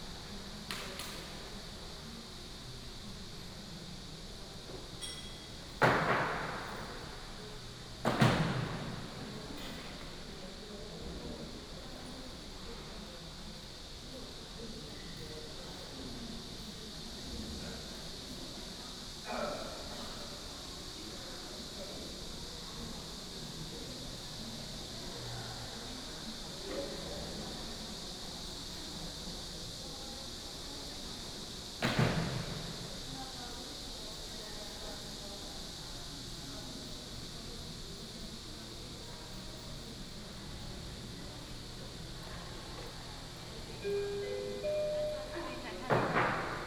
護國宮, Bade Dist., Taoyuan City - In the temple
In the temple, Cicadas, Birds
八德區, 桃園市, 臺灣, 5 July 2017